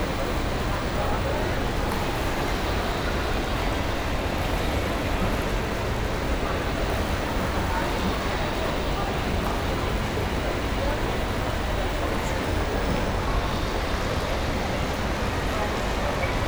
standing on the 2nd floor, watching the water fountains in the basement. ZoomH4 + OKM binaural mics